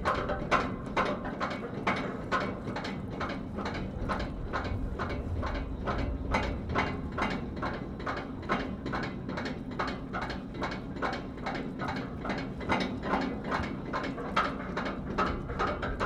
United Kingdom - King Harry Ferry
King Harry Ferry travelling across the river Fal
OKTAVA M/S + Cheap D.I.Y Contact Mic